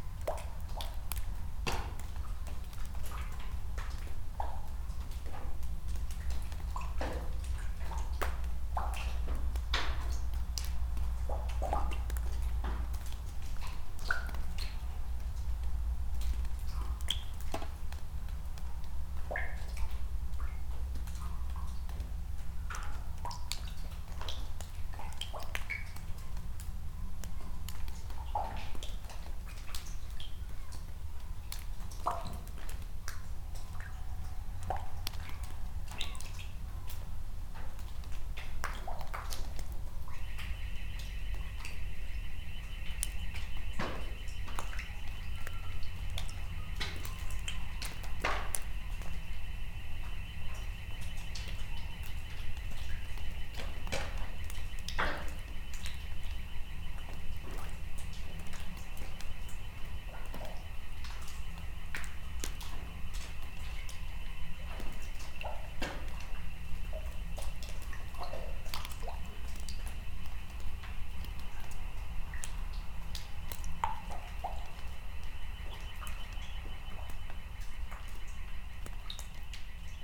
{"title": "Vaikutenai, Lithuania, abandoned farm ambience", "date": "2021-03-27 14:30:00", "description": "big abandoned farm from soviet times, the roof is half deteriorated, trash everywhere...", "latitude": "55.53", "longitude": "25.69", "altitude": "167", "timezone": "Europe/Vilnius"}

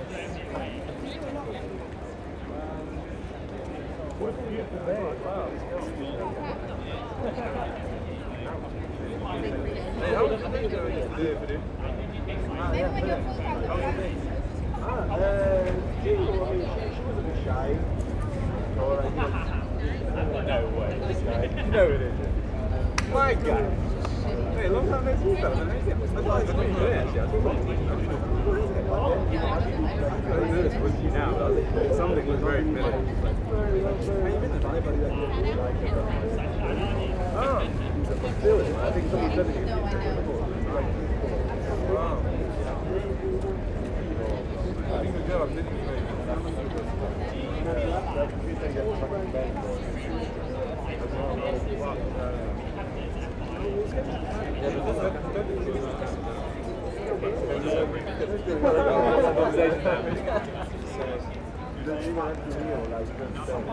{"title": "Lunchtime crowds on beautiful day, Angel Ln, London, UK - Lunchtime crowds on a beautiful day", "date": "2022-05-17 12:33:00", "description": "Many come here to eat lunch beside the river, particularly on such a warm sunny day as this.", "latitude": "51.51", "longitude": "-0.09", "altitude": "18", "timezone": "Europe/London"}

